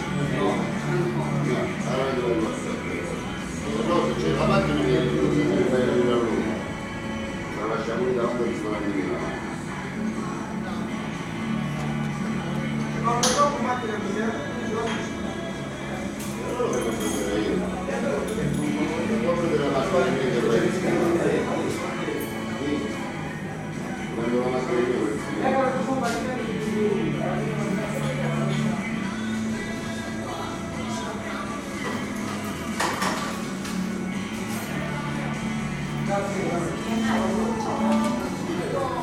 schio stazione bar
bar (schio stazione)